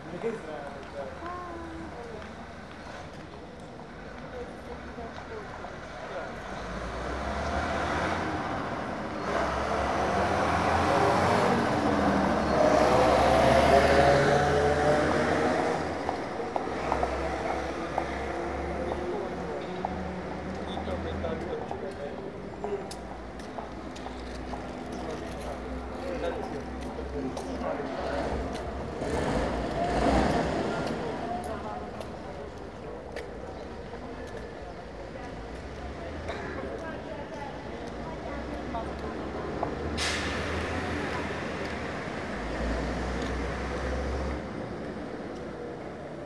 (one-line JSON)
{"title": "budapest, deak ferenc ter m, bus station", "description": "at a city bus station, here fairly quiet with some footsteps and conversation in the background\ninternational city scapes and social ambiences", "latitude": "47.50", "longitude": "19.05", "altitude": "111", "timezone": "Europe/Berlin"}